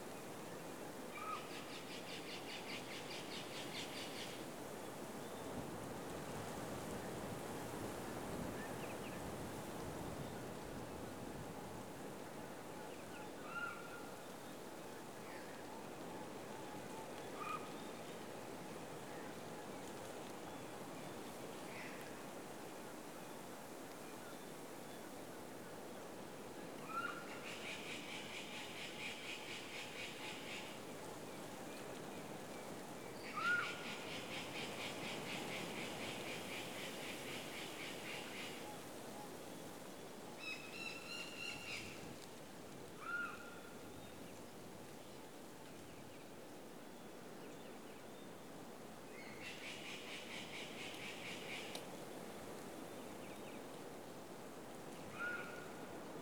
Peten, Guatemala - La Danta nature soundscape 3